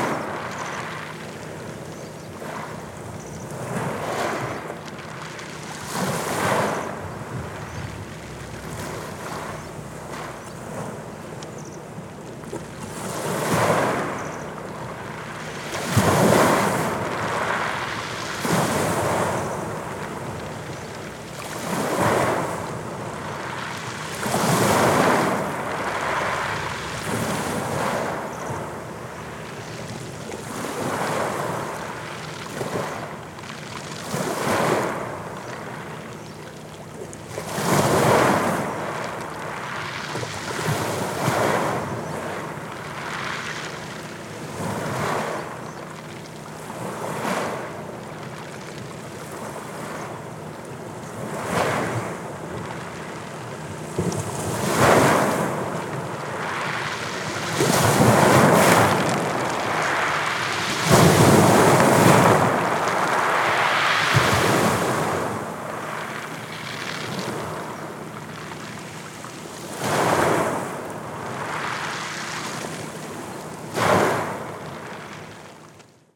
{"title": "Medveja, Medveja, waves@beach", "date": "2008-02-19 12:30:00", "description": "waves washing the beach in Winter time.", "latitude": "45.27", "longitude": "14.27", "altitude": "14", "timezone": "Europe/Zagreb"}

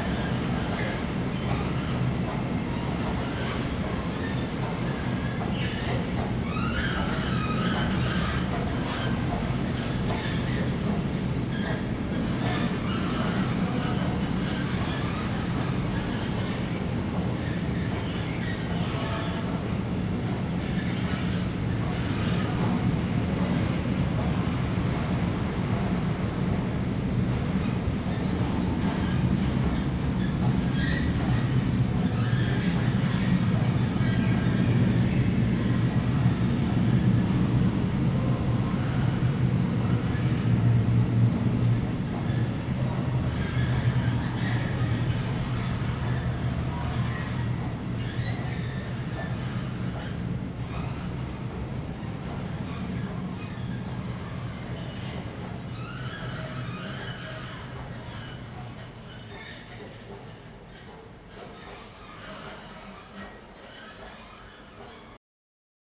{"title": "Přístaviště, Ústí nad Labem-město-Ústí nad Labem-centrum, Czechia - Singing escalators at the corridor unterganag", "date": "2018-04-09 19:21:00", "description": "moaning rubber bands at the escalators", "latitude": "50.66", "longitude": "14.04", "altitude": "144", "timezone": "Europe/Prague"}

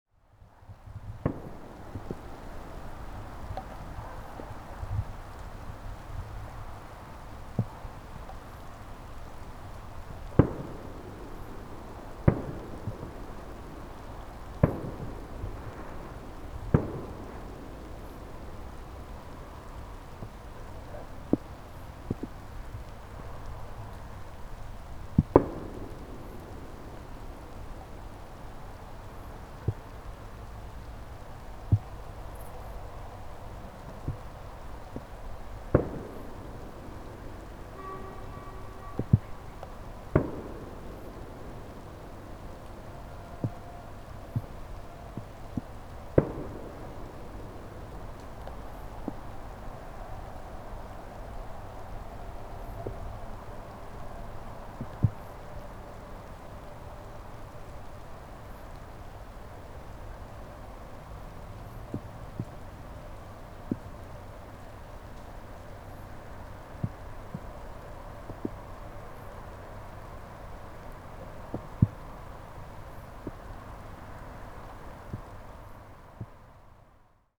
{"title": "Cesta na Červený most, Karlova Ves, Slovakia - A Minute In a Forest Park", "date": "2020-11-03 22:07:00", "description": "Recorded in Bratislava city forest park - trees, crickets, light rain, sounds of distant fireworks (echoes on the left created by forest) and low hum of night city + noises of city traffic.", "latitude": "48.17", "longitude": "17.07", "altitude": "195", "timezone": "Europe/Bratislava"}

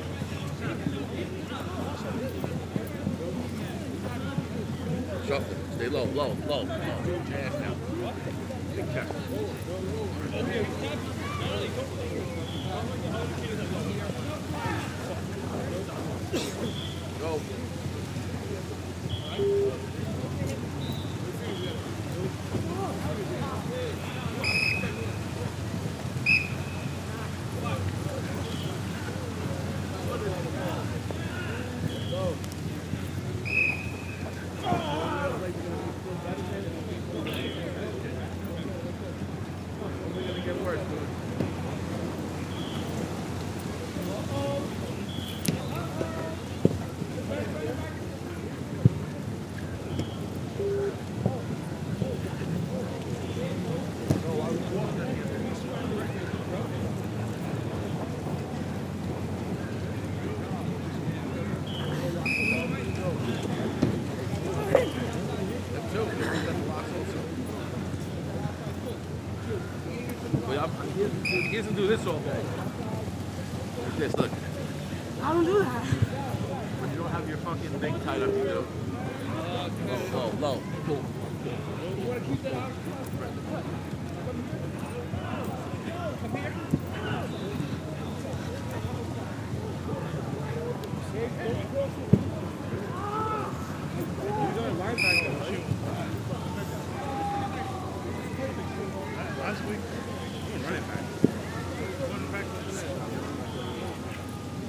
{"title": "Ave, Queens, NY, USA - Karaoke, Backpedal, Shuffle and Sprint", "date": "2022-03-27 13:30:00", "description": "A young rugby team doing a four cone exercise of Karaoke, Backpedal, Shuffle, and Sprint.", "latitude": "40.71", "longitude": "-73.89", "altitude": "33", "timezone": "America/New_York"}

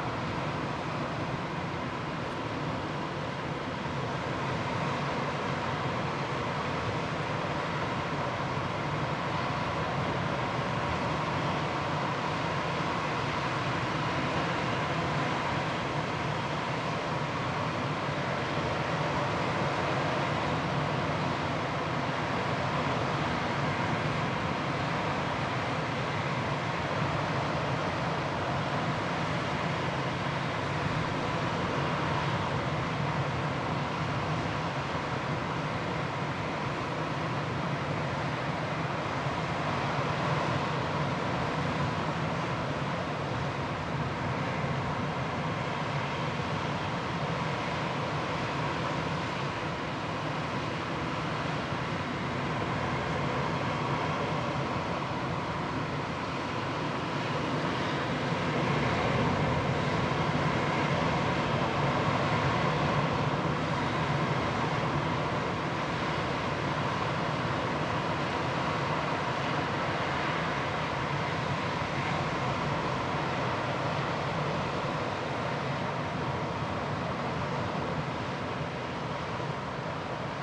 {"title": "Llantwit Major, Wales - Vale of Glamorgan", "date": "2016-02-13 00:20:00", "description": "Tucked into the cliffs of the Vale of Glamorgan | recorded with a pair of DPA 4060s, running into a Marantz PMD 661", "latitude": "51.40", "longitude": "-3.50", "altitude": "11", "timezone": "Europe/London"}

